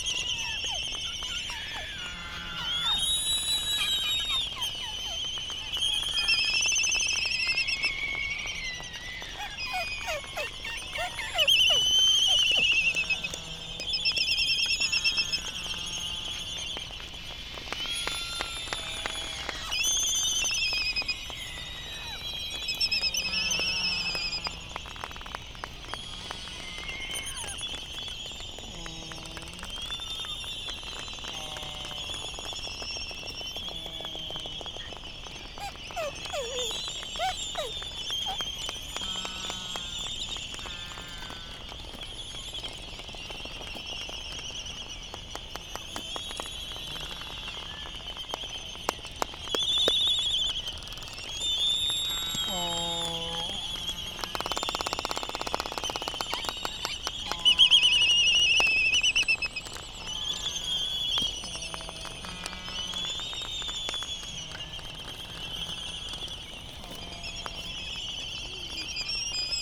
{"title": "United States Minor Outlying Islands - Laysan albatross dance soundscape ...", "date": "2012-03-13 07:00:00", "description": "Laysan albatross dance soundscape ... Sand Island ... Midway Atoll ... laysan calls and bill clapperings ... background noise from buggies ... open lavalier mics ... warm ... slightly blustery morning ...", "latitude": "28.22", "longitude": "-177.38", "altitude": "14", "timezone": "Pacific/Midway"}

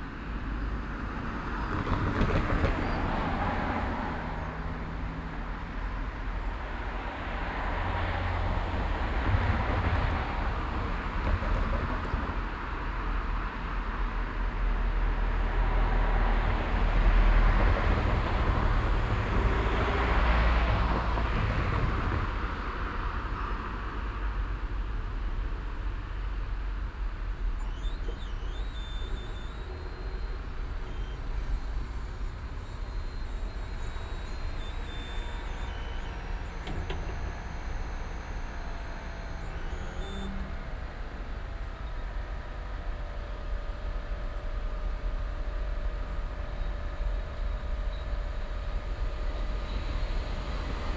{"title": "refrath, in der auen, bahnübergang, schranke", "description": "morgens am bahnübergang, das herunterlassen der schranken, vorbeifahrt strassenbahn, hochgehen der schranken, anfahrt des strassenverkehrs\nsoundmap nrw - social ambiences - sound in public spaces - in & outdoor nearfield recordings", "latitude": "50.95", "longitude": "7.10", "altitude": "69", "timezone": "GMT+1"}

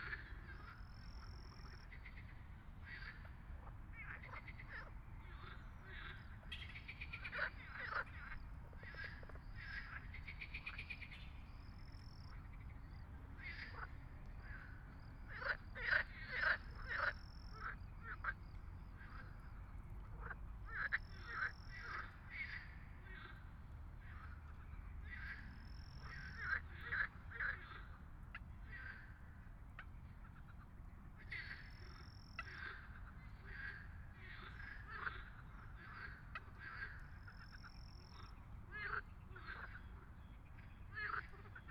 {"title": "Hálova, Petržalka, Slovakia - Pelophylax ridibundus", "date": "2020-05-09 20:30:00", "description": "Distant high pitched cries of common swifts, insects, runners, basketball practice, sirens, omnipresent humming cars, scooters, random snippets of conversations, but most importantly: impressive crescendos of marsh frogs, vocalizing in explosive waves amidst the Bratislava's soviet-era panel-house borough.", "latitude": "48.12", "longitude": "17.11", "altitude": "135", "timezone": "Europe/Bratislava"}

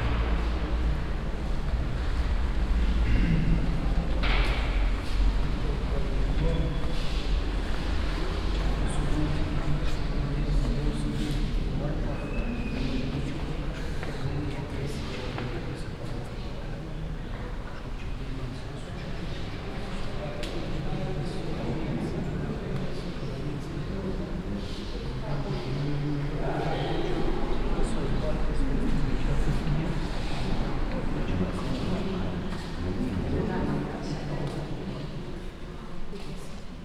{"title": "Vilniaus šv. Pranciškaus Asyžiečio parapija, Maironio gatvė, Vilnius, Litauen - Vilnius, church ambience", "date": "2015-07-04 11:30:00", "description": "Inside a small historical church at Vilnius city. The sounds of whispering visitors entering the space through the wooden door and the sounds of cameras taking pictures of the religious objects and paintings.\ninternational city sounds - topographic field recordings and social ambiences", "latitude": "54.68", "longitude": "25.29", "altitude": "100", "timezone": "Europe/Vilnius"}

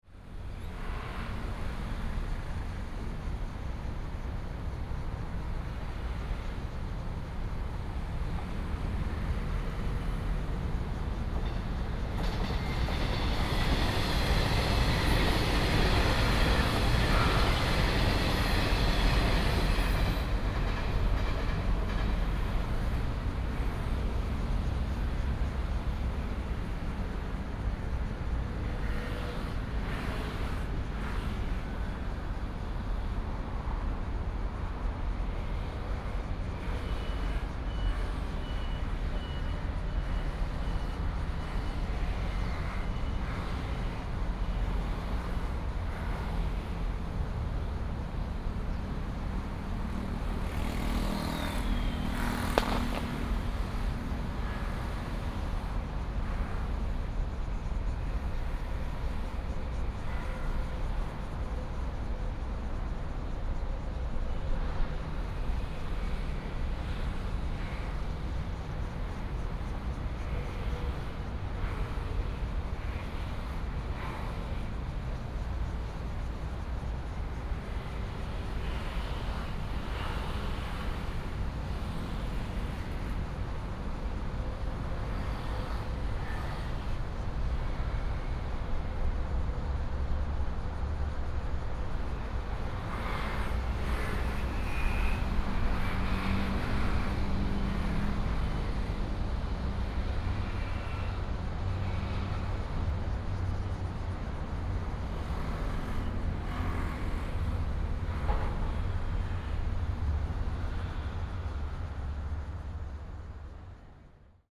{"title": "新農街二段, Yangmei Dist. - by the road", "date": "2017-08-11 17:20:00", "description": "by the road, Cicada sound, Traffic sound, The train runs through", "latitude": "24.91", "longitude": "121.17", "altitude": "169", "timezone": "Asia/Taipei"}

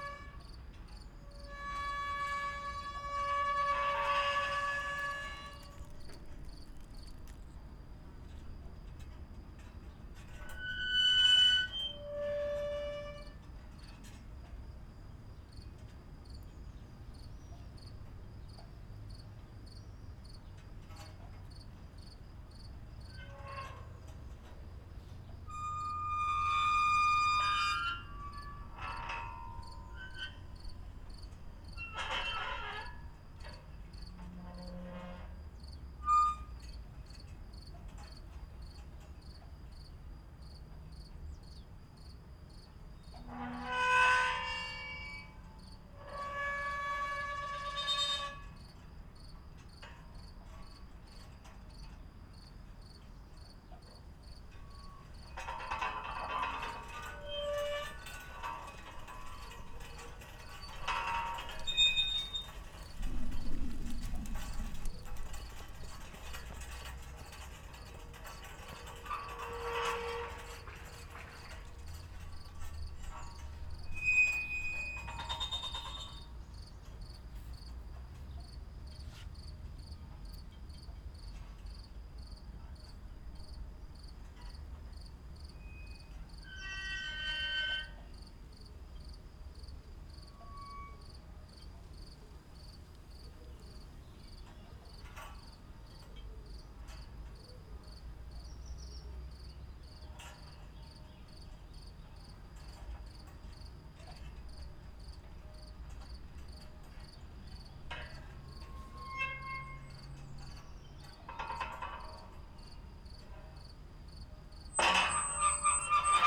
{"title": "Maribor, Melje - flagpoles", "date": "2012-05-27 09:30:00", "description": "flagpoles singing and elderflowers raining on me. near river Drava, halb abandoned industrial area.\n(tech: SD702, AT BP4025)", "latitude": "46.56", "longitude": "15.67", "altitude": "252", "timezone": "Europe/Ljubljana"}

